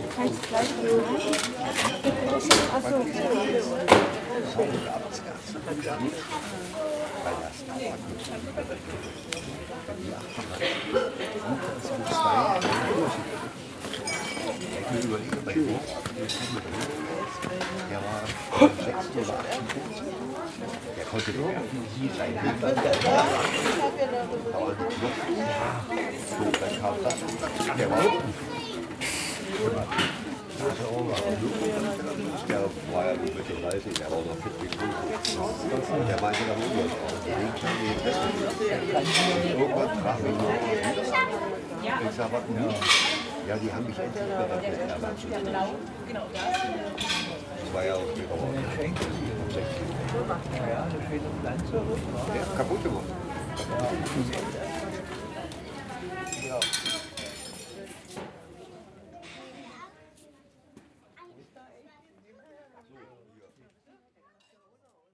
Bahnhofstraße, Eichwalde, Deutschland - Marios Eiscafé
Marios Eiscafé / Straßencafé H4n/ Protools